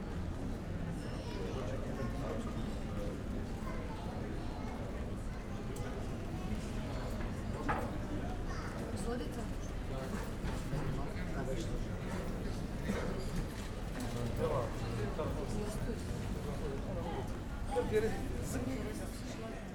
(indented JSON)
{
  "title": "Maribor, Slovenska ulica, cafe - street ambience",
  "date": "2012-05-31 16:40:00",
  "description": "in a street cafe, after many km on a bike, enjoying the murmur and relaxed atmosphere in Slovenska street.\n(SD 702 DPA4060)",
  "latitude": "46.56",
  "longitude": "15.65",
  "altitude": "274",
  "timezone": "Europe/Ljubljana"
}